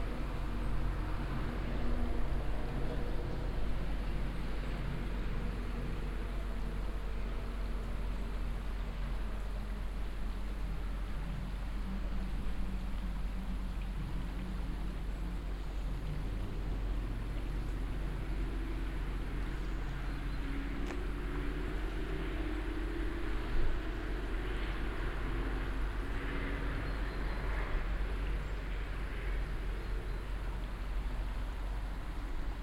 on the road to Dasbourg at a parking lot. The sound of the river Our and traffic echoing in the valley. Passing by on the street some cars and two motorbikes.
Straße nach Dasburg, Haaptstrooss, Verkehr
Auf der Straße nach Dasburg auf einem Parkplatz. Das Geräusch vom Fluss Our und von Verkehr, der im Tal widerhallt. Auf der Straße fahren einige Autos und zwei Motorräder vorbei.
route en direction de Dasbourg, trafic
Sur une aire de stationnement de la route en direction de Dasbourg. Le son de la rivière Our et le trafic routier qui se répercute dans la vallée. Dans le fond, on entend des voitures et deux motos sur la route.